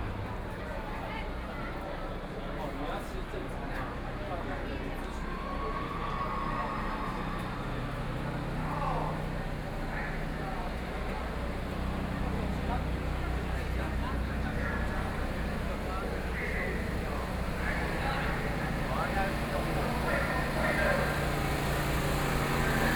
Qingdao E. Rd., Taipei City - occupied the Legislative Yuan
Walking through the site in protest, People and students occupied the Legislative Yuan
Binaural recordings